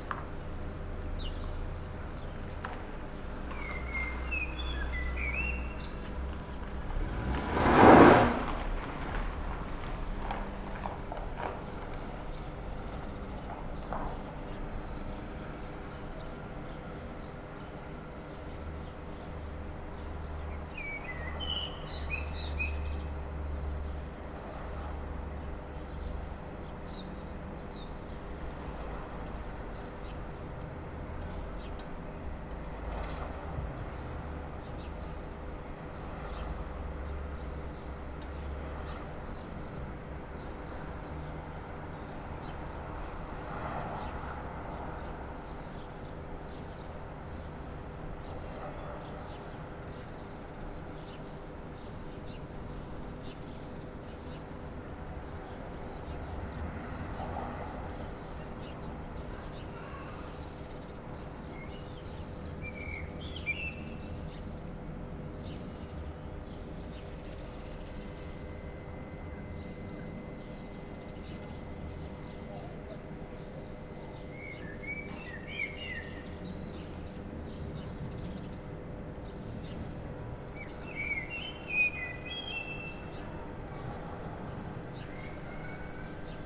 Platania, Crete, from the roof of abandoned house
standing on a roof of abandoned house and listening to soundscape